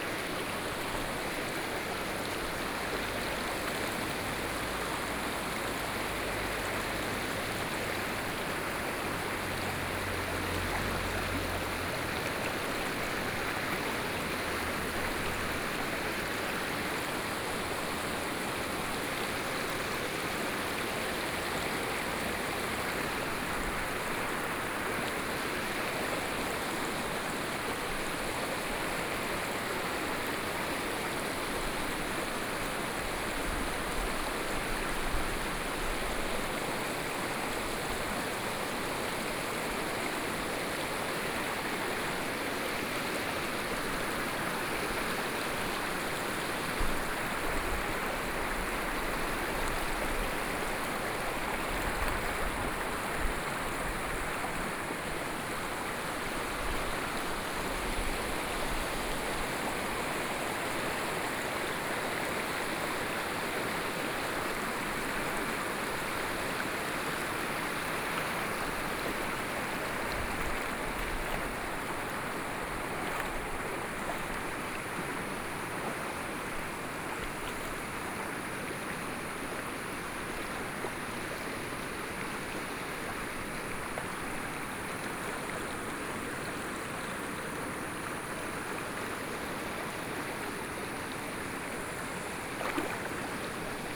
{"title": "白鮑溪, Shoufeng Township - Walking in the stream", "date": "2014-08-28 11:38:00", "description": "Walking in the stream, Hot weather", "latitude": "23.89", "longitude": "121.51", "altitude": "74", "timezone": "Asia/Taipei"}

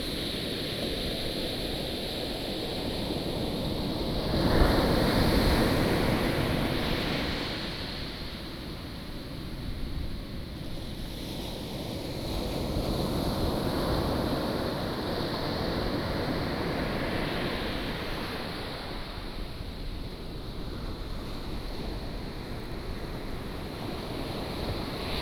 {"title": "Taitung County, Taiwan - Sound of the waves", "date": "2014-09-08 11:34:00", "description": "Sound of the waves, At the seaside", "latitude": "23.19", "longitude": "121.40", "altitude": "4", "timezone": "Asia/Taipei"}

